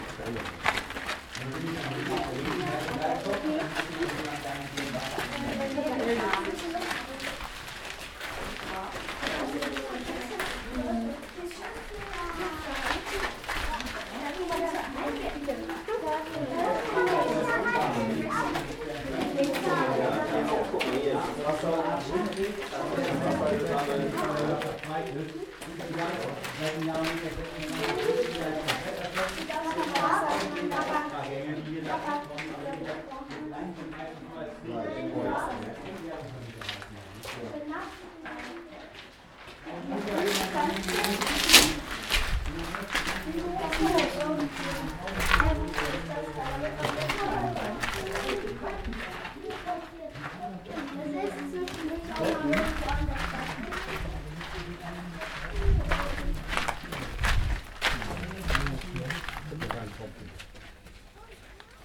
Wiehl, Deutschland - Tropfsteinhöhle Wiehl / Stalactite Cave Wiehl
Mit einer Gruppe in der Tropftsteinhöhle.
With a group in the Stalactite Cave Wiehl.